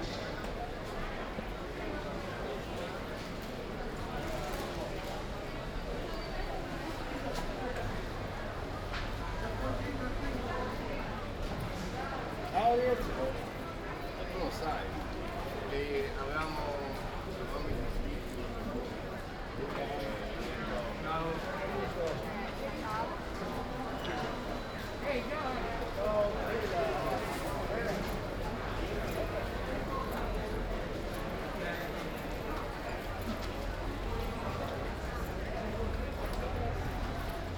Ascolto il tuo cuore, città, Chapter LXXVIII - “Walk to outdoor market on Saturday in the time of covid19” Soundwalk
“Walk to outdoor market on Saturday in the time of covid19” Soundwalk
Chapter LXXVIII of Ascolto il tuo cuore, città. I listen to your heart, city.
Saturday May 16th 2020. Walk in the open-door square market at Piazza Madama Cristina, district of San Salvario, Turin, sixty seven days after (but day thirteen of Phase II) emergency disposition due to the epidemic of COVID19.
Start at 11:57 a.m., end at h. 00:24 p.m. duration of recording 26’42”
The entire path is associated with a synchronized GPS track recorded in the (kml, gpx, kmz) files downloadable here: